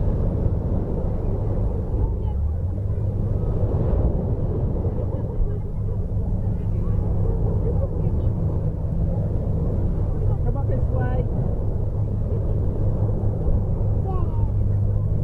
in summer 2009 on beach at overcombe corner. rumble of sea and pebbles. Family talking in distance.
South West England, England, United Kingdom